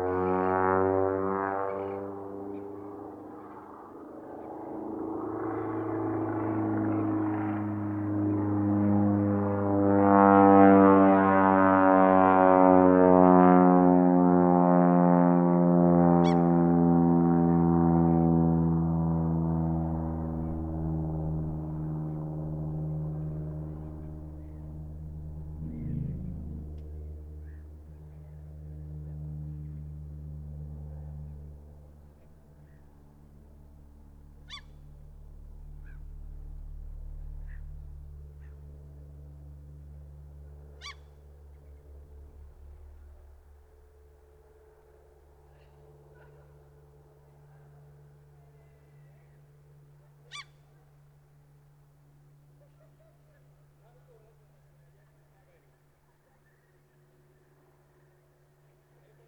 Small plane flying above Hietasaari, Oulu on a calm May evening during sunset. Recorded with Zoom H5 with default X/Y capsule.